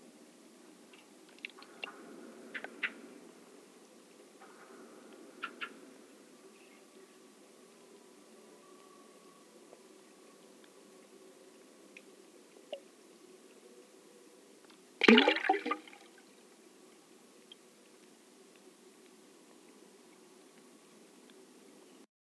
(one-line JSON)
{"title": "Kanaleneiland, Utrecht, The Netherlands - hydro + brug", "date": "2014-04-02 11:11:00", "description": "hydrophones + stereo mic", "latitude": "52.09", "longitude": "5.10", "altitude": "3", "timezone": "Europe/Amsterdam"}